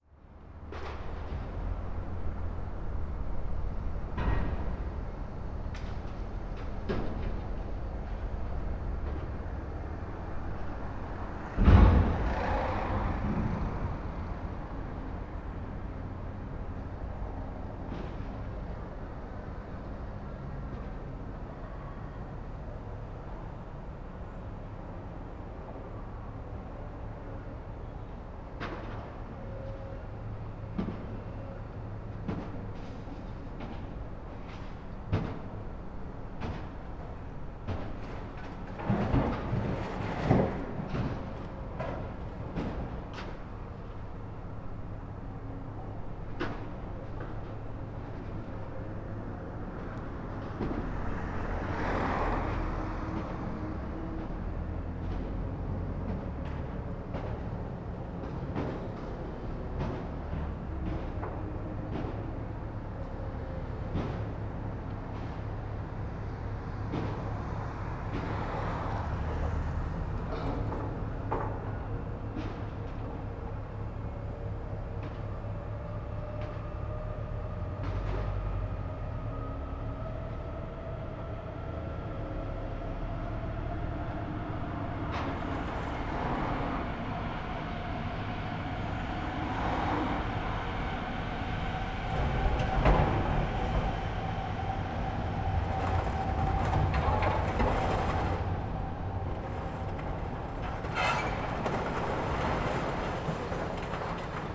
berlin: kiehlufer - A100 - bauabschnitt 16 / federal motorway 100 - construction section 16: treatment company to manage secondary raw materials
excavator with clamshell attachment sorting scrap
the motorway will pass the east side of this territory
the federal motorway 100 connects now the districts berlin mitte, charlottenburg-wilmersdorf, tempelhof-schöneberg and neukölln. the new section 16 shall link interchange neukölln with treptow and later with friedrichshain (section 17). the widening began in 2013 (originally planned for 2011) and shall be finished in 2017.
sonic exploration of areas affected by the planned federal motorway a100, berlin.
february 2014
Berlin, Germany, 18 February 2014, ~14:00